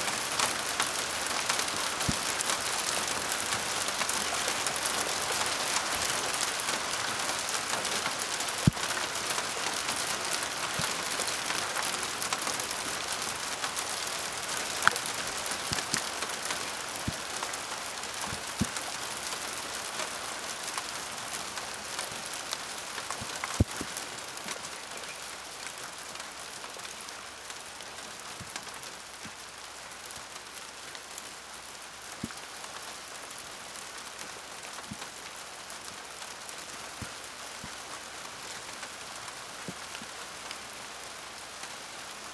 Berlin Bürknerstr., backyard window - ice rain
sudden ice rain, and it suddenly stops
(Sony PCM D50)
Berlin, Germany